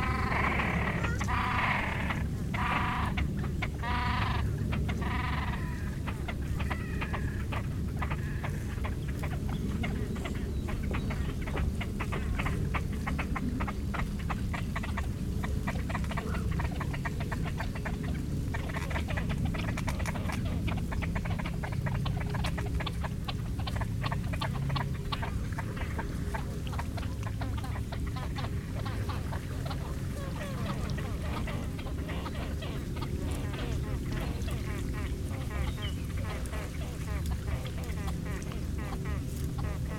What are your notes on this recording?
Au bord du lac d'Annecy, les grèbes dans la roselière des Avollions, bruits de bateaux.